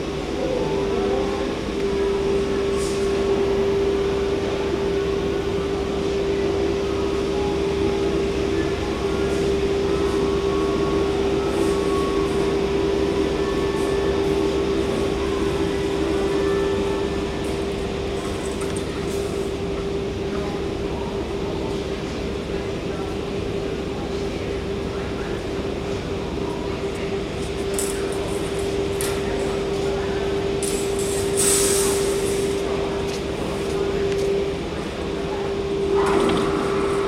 Epicentr, Zaporiz'ke Hwy, . Dnipro, Ukraine - Epicentr [Dnipro]
27 May 2017, 10:00